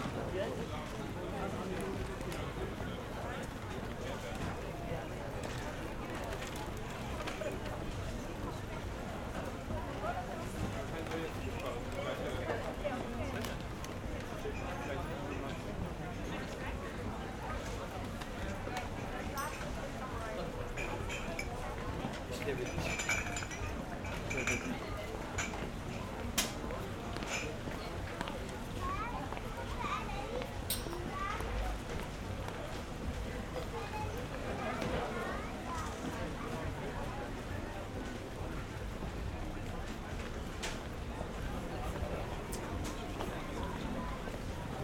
Vorstadt, Kiel, Deutschland - Christmas market 2016
Christmas Market 2016, pedestrian zone of Kiel, Germany
Zoom H6 Recorder X/Y capsule